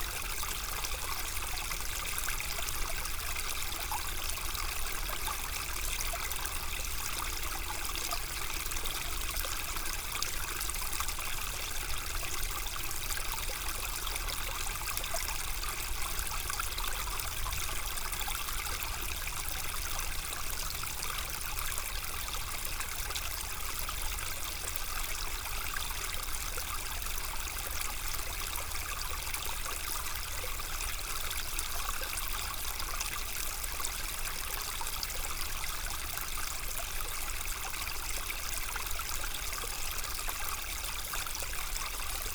{"title": "Court-St.-Étienne, Belgique - Ry Pirot stream", "date": "2017-01-12 10:15:00", "description": "The very quiet Ry Pirot stream, flowing in a beautiful forest.", "latitude": "50.60", "longitude": "4.55", "altitude": "130", "timezone": "GMT+1"}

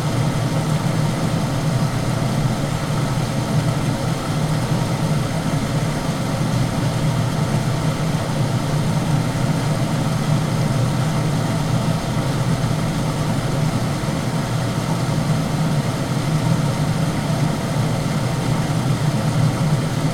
{"title": "mill air duct", "date": "2011-07-18 16:05:00", "description": "the ahja river resonating inside a wooden air duct in a ruined mill in põlvamaa, southeast estonia. WLD, world listening day", "latitude": "58.01", "longitude": "26.92", "altitude": "92", "timezone": "Europe/Tallinn"}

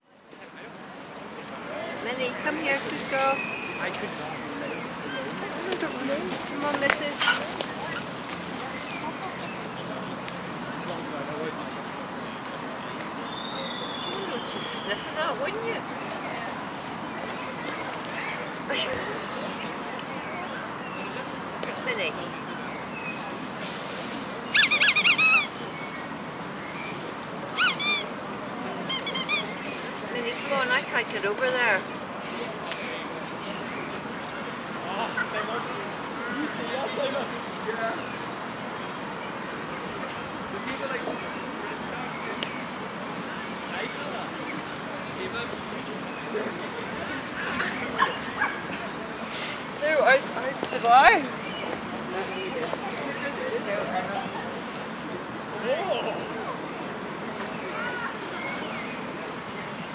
{"title": "College Gardens, Belfast, UK - Botanic Gardens", "date": "2021-03-27 16:05:00", "description": "Recording of a dog running around me tries to get their toy, passer-by’s chatting, various birds at different distances, someone playing music from their portable speaker, joggers, and groups of people playing sports in the open fields.", "latitude": "54.58", "longitude": "-5.93", "altitude": "17", "timezone": "Europe/London"}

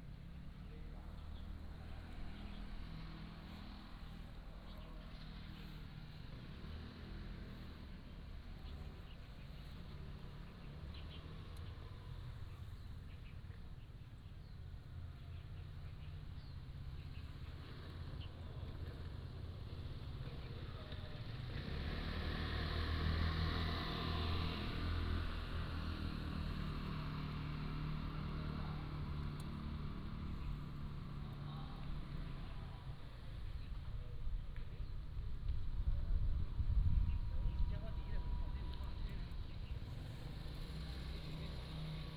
{"title": "海仔口漁港, Hsiao Liouciou Island - Small fishing port", "date": "2014-11-02 08:40:00", "description": "In the fishing port", "latitude": "22.32", "longitude": "120.36", "altitude": "8", "timezone": "Asia/Taipei"}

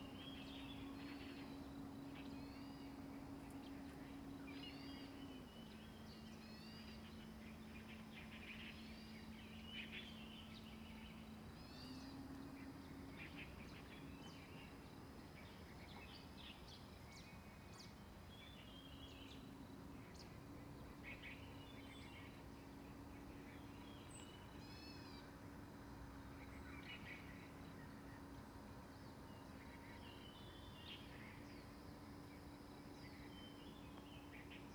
{"title": "草楠濕地, 桃米里, Puli Township - wetlands", "date": "2016-03-27 08:45:00", "description": "in the wetlands, Bird sounds, Traffic Sound\nZoom H2n MS+XY", "latitude": "23.95", "longitude": "120.91", "altitude": "584", "timezone": "Asia/Taipei"}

